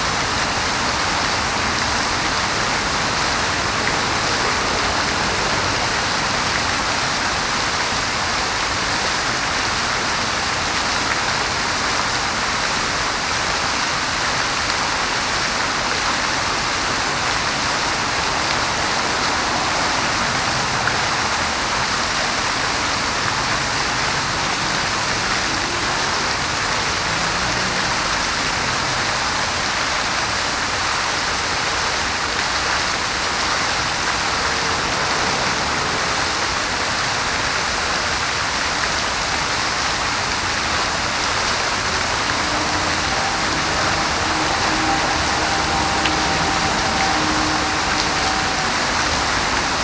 {"title": "Saint-Germain-l'Auxerrois, Paris, France - Fontaine des Fleuves", "date": "2015-11-26 20:00:00", "description": "Fontaine des Fleuves, place de la Concorde, 75001 Paris\nJacques Hittorff, 1840", "latitude": "48.87", "longitude": "2.32", "altitude": "34", "timezone": "Europe/Paris"}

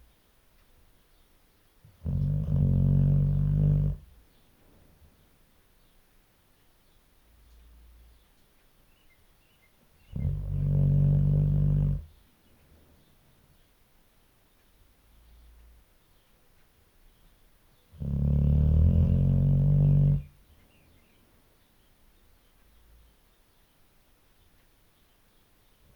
{"title": "Luttons, UK - podge ... the bull mastiff ... sleeping ... snoring ... again ...", "date": "2019-07-01 04:30:00", "description": "Podge ... the bull mastiff ... sleeping ... snoring ... again ... integral LS 14 mics ... she was seriously distressed ... we thought she might not return from the vets ... she's back with her family and none the worse ... rumble on old girl ... sadly Podge passed away ... 2019/12/28 ... an old and gentle lady ...", "latitude": "54.12", "longitude": "-0.54", "altitude": "76", "timezone": "Europe/London"}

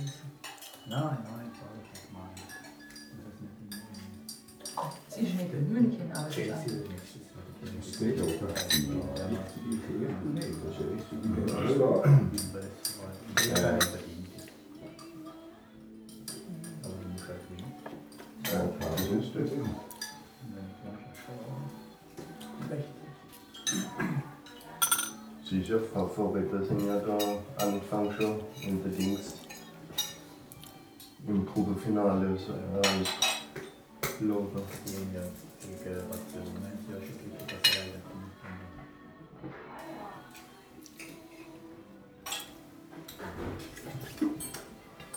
{"title": "Schiltach, Deutschland - Schiltach, hotel, breakfast room", "date": "2012-05-20 08:00:00", "description": "In a hotel breakfast room in the morning time. The sound of hotel guests talking while eating their breakfast. Percussive accents of plates and dishes. In the background radio muzak.", "latitude": "48.29", "longitude": "8.34", "altitude": "340", "timezone": "Europe/Berlin"}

